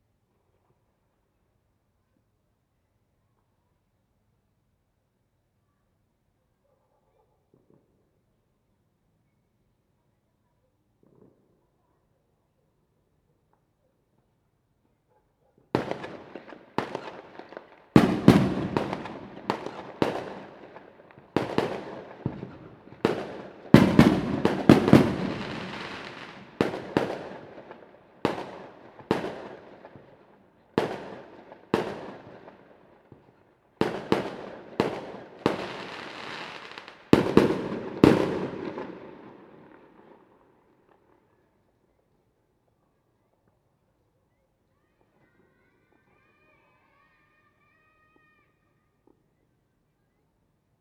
Fanshucuo, Shuilin Township - Fireworks sound
Fireworks sound, Firecrackers, Small village, Traditional New Year
Zoom H2n MS +XY